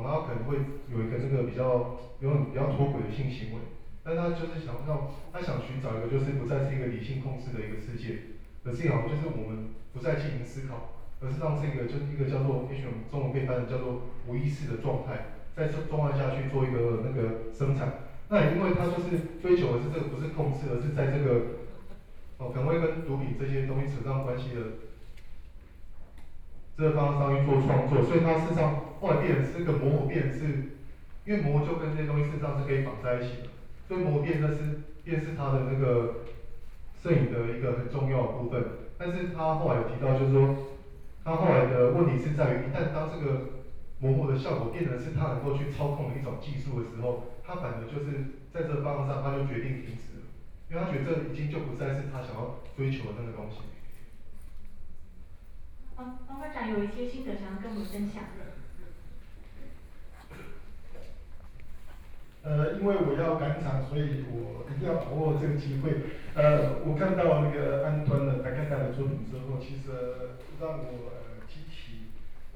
TAIPEI FINE ARTS MUSEUM - artist talk
Ground floor of the museum's library, French photographer Antoine DAgata lectures, Museum curator to ask questions and share, Binaural recordings, Sony PCM D50 + Soundman OKM II
Taipei City, Taiwan